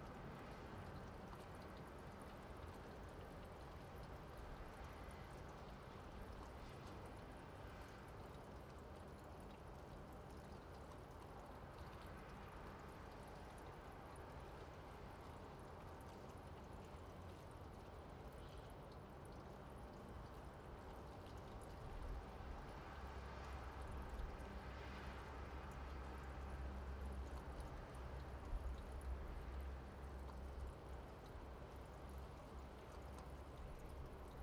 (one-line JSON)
{"title": "Budapest, Winter 2010/2011, First Snow", "date": "2010-11-27 07:02:00", "latitude": "47.48", "longitude": "19.09", "altitude": "116", "timezone": "Europe/Budapest"}